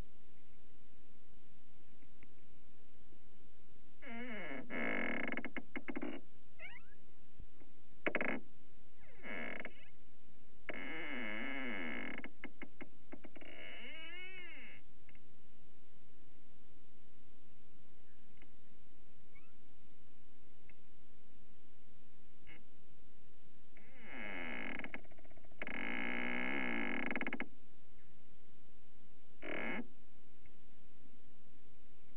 In the Eucalyptus stand
Eucalyptus trees rub together in the wind